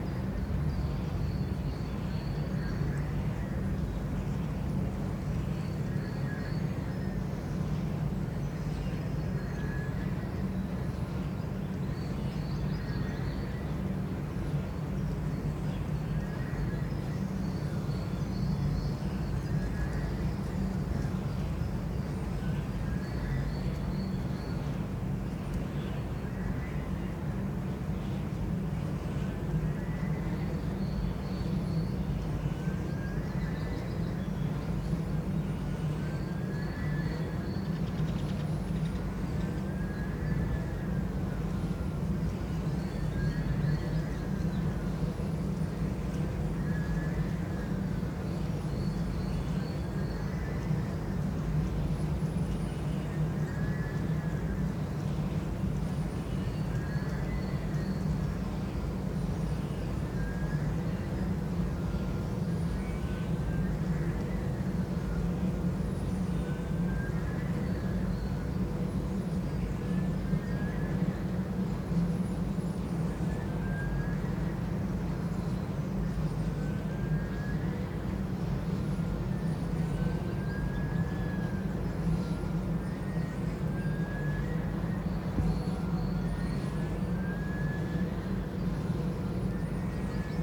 near Allrath, Germany - Whistling windgenerator
Wind generators are a green face of energy production in this area otherwise dominated by huge opencast brown coal mines and associated power stations. All are owned by the company RWE AG, one of the big five European energy companies. Each wind generator has different sound. This one has a characteristic whistle the acoustics of which are weird. The sound can only be heard in certain spots, not necessarily those closest to the turbine.